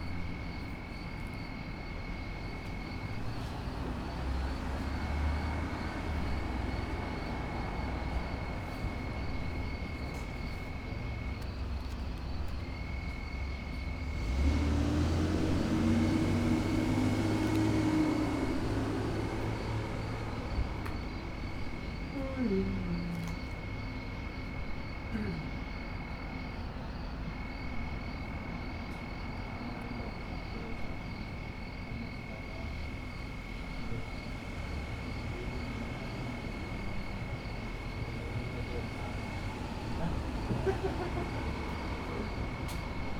neoscenes: guys late at night
NY, USA, 8 August 2008